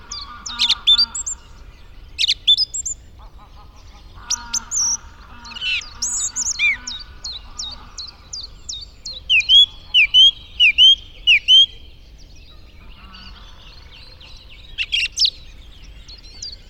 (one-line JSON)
{"title": "Unnamed Road, Šaštín - Stráže, Slovakia - Song thrush in Zahorie, dawn chorus", "date": "2021-03-28 06:05:00", "description": "Dawn chorus in Zahorie.", "latitude": "48.60", "longitude": "17.16", "altitude": "219", "timezone": "Europe/Bratislava"}